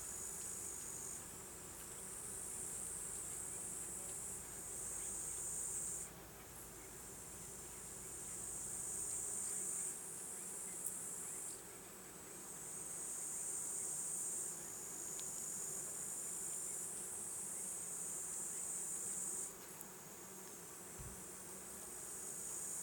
Kastna Tammik (oak grove)
small sounds around an ancient oak tree